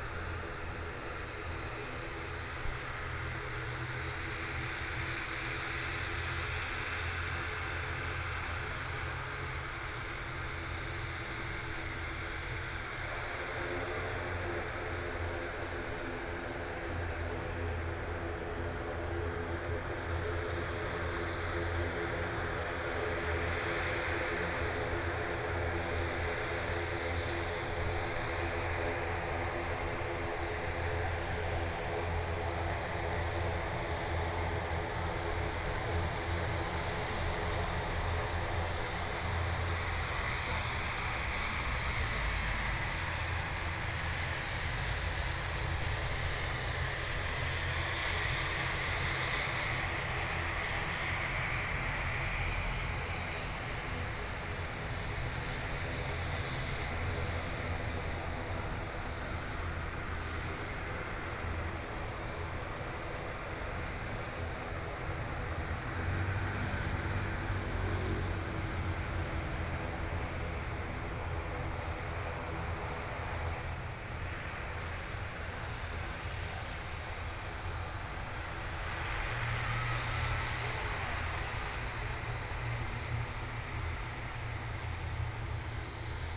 A lot of people are waiting to watch planes take off and land, Aircraft flying through, Traffic Sound
Binaural recordings, ( Proposal to turn up the volume )
Zoom H4n+ Soundman OKM II
Taipei City, Taiwan, 15 February 2014, ~16:00